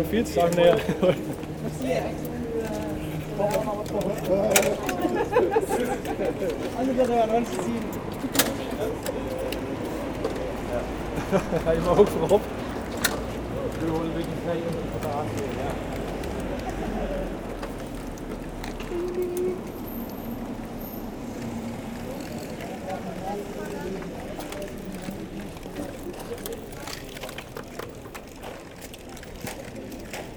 Maastricht, Pays-Bas - Water mill
Into narrow cobblestones streets, a German tourists group is visiting Maastricht. I'm entering into a bakkery, behind there's an enormous water mill. At the end, a Spanish tourists group leaves with the bikes.